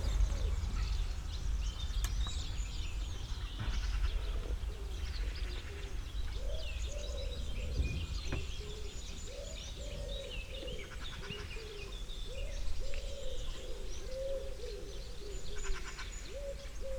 Bredereiche, Fürstenberg/Havel, Deutschland - morning at the river Havel
morning at the river Havel, village of Bredereiche. Drone from cars on cobblestones, an angler leaves the place, jackdaws around.
(Sony PCM D50, Primo EM 172)
Brandenburg, Deutschland, 13 July 2019